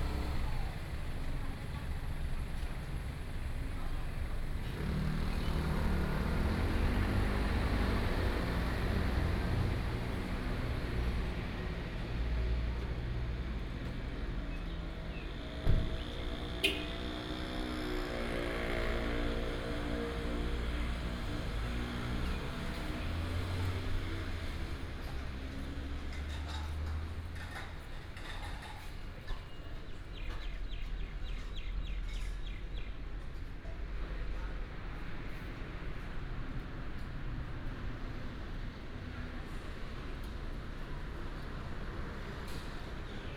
Xinshan St., Xihu Township - In the square of the temple
In the square of the temple, Traffic sound, sound of the birds
Changhua County, Taiwan, 6 April 2017, 12:45